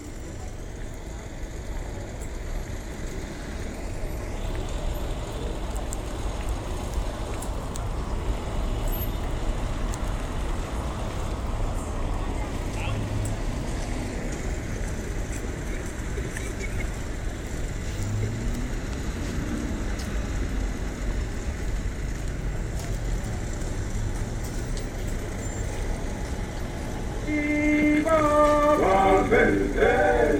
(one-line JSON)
{"title": "Makokoba, Bulawayo, Zimbabwe - Around Joshua Nkomo Monument", "date": "2014-01-27 16:20:00", "description": "A stall in the middle of the road selling memorabilia; with their own generator and amp system, they often “broadcast” suitably patriotic or revolutionary music into the air around the memorial… and sometimes more than just music…\n(mobile phone recording )\narchived at:", "latitude": "-20.15", "longitude": "28.58", "altitude": "1355", "timezone": "Africa/Harare"}